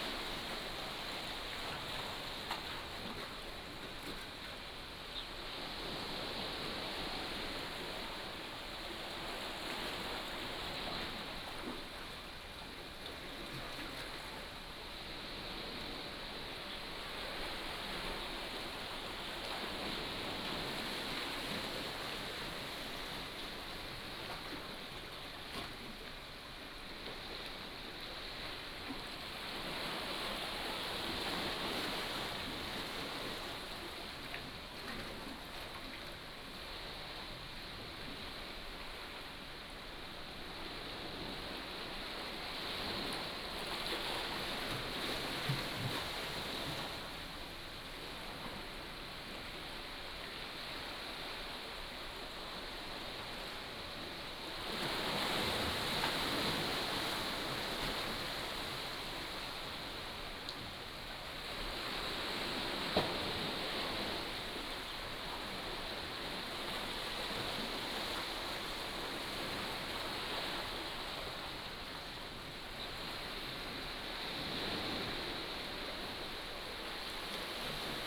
Below the house, Sound of the waves, Small fishing village
15 October 2014, 13:03